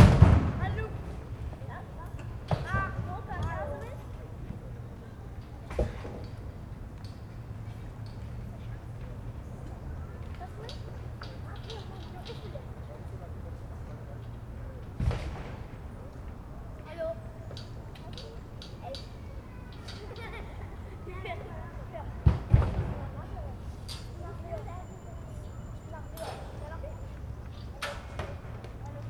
playing kids, worker lads logs on a truck
the city, the country & me: august 3, 2011